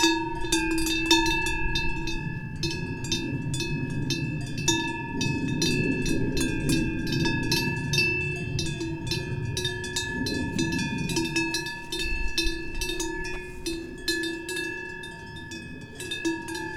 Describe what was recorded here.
Near the edge of the forest, theres a small farmland, where 5 cows and one bull are making their distinctive swiss cowbells sing.